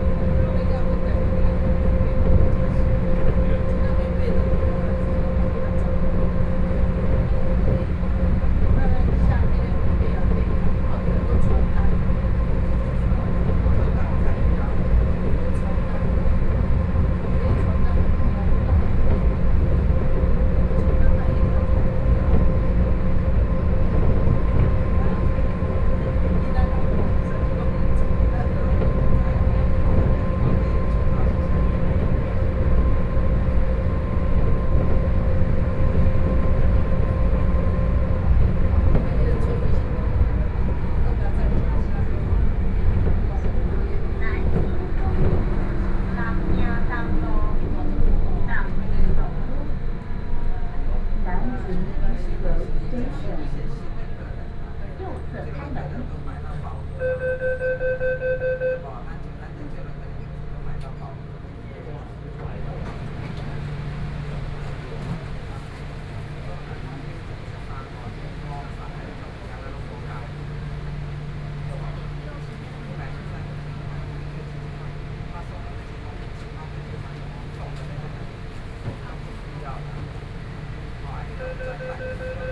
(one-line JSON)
{"title": "Brown Line (Taipei Metro)", "date": "2013-09-10 15:09:00", "description": "from Zhongxiao Fuxing station to Songshan Airport station, Sony PCM D50 + Soundman OKM II", "latitude": "25.06", "longitude": "121.54", "altitude": "20", "timezone": "Asia/Taipei"}